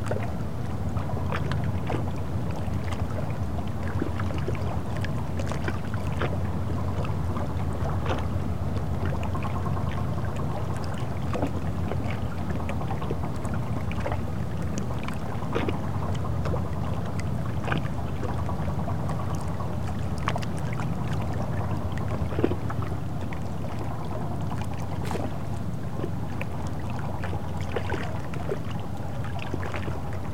Bd Champlain, Québec, QC, Canada - Port of Quebec City
Recorded with a technica BP4025 audio microphone and an H4n recorder [mono]. Foggy day. Boats can be heard nearby.
28 October 2019, 12:55